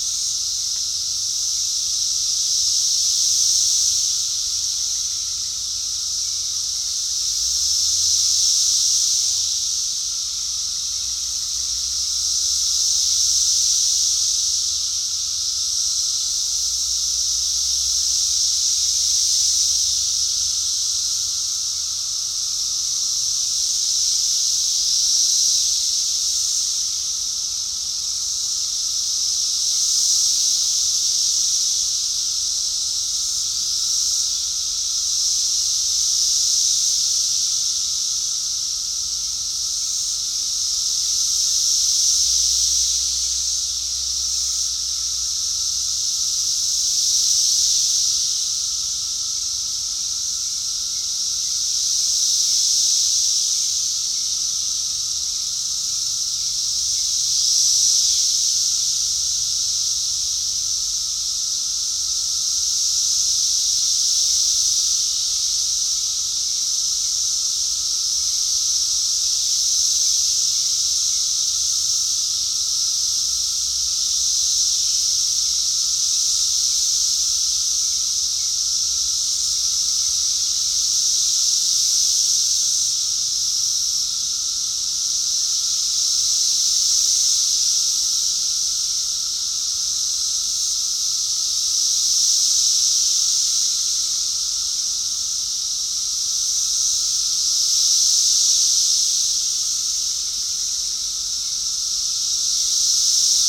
Sedgwick Ave, Gettysburg, PA, USA - Cicadas Brood X 2021
Brood X Cicadas 05 May 2021, recorded near Little Round Top in the Gettysburg National Military Park.
The insects were active and loud. There was some distant traffic which was mostly drowned out by the cicadas.
Sound Devices MixPre-3 v2.
AT 3032 omni mics spaces about 2 meters with Roycote baseball wind covers and fur over that.